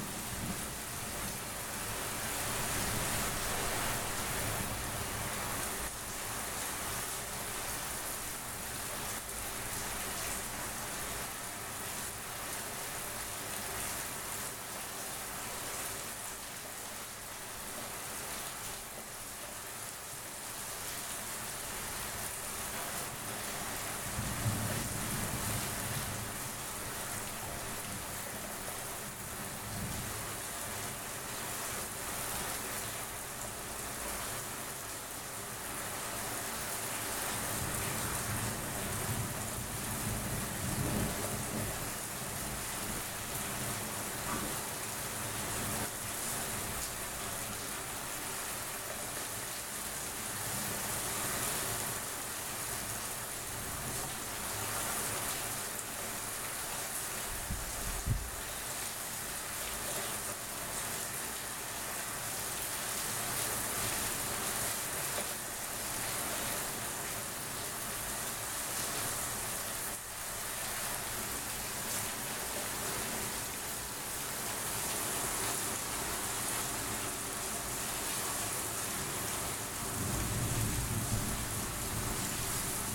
almost distant storm soundscape with rain, São Sebastião da Grama - SP, Brasil - almost distant storm soundscape with rain
This soundscape archive is supported by Projeto Café Gato-Mourisco – an eco-activism project host by Associação Embaúba and sponsors by our coffee brand that’s goals offer free biodiversity audiovisual content.